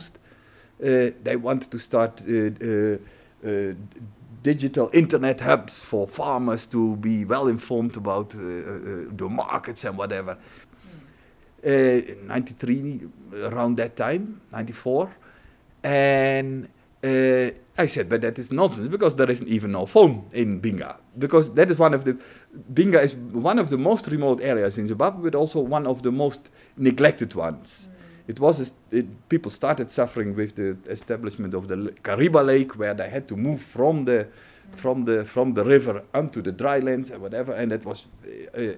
Jos describes the remoteness of Binga, it's non-connection to the rest of the country while he worked there; but things have moved on...
The entire interview with Jos Martens is archived here: